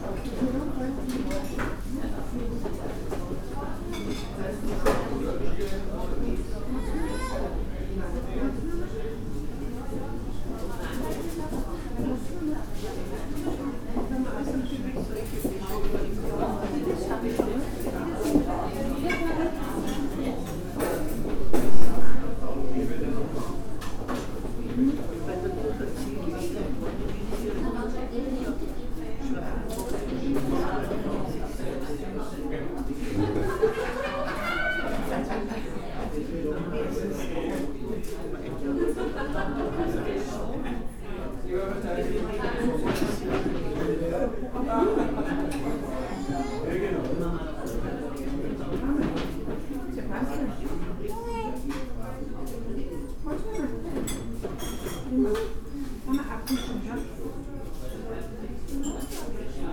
Alt-Urfahr, Linz, Österreich - konditorei jindrak
konditorei jindrak, Hauptort. 35, 4040 linz
Linz, Austria, 18 January 2015